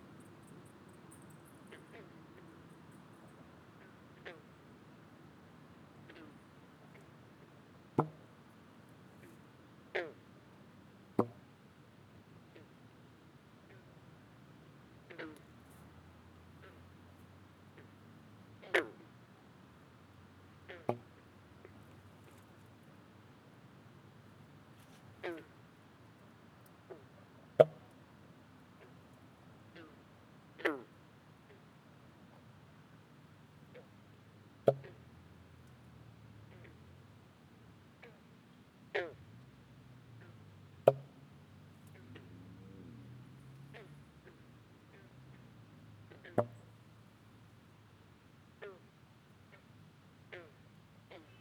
Taylor Creek Park, East York, ON, Canada - Sax with frogs
Went on a late-night walk up to the local creek with the intention of trying to play quiet saxophone sounds along with bullfrogs in a pond beside the recreational trail. Fortunately, I discovered a closer one than my intended destination which suited my purpose equally well. Since it was fairly near a main road bridge that spans the valley there is more traffic noise than I would've liked, but probably not much worse than my original site.
Toronto, Ontario, Canada, 11 July 2019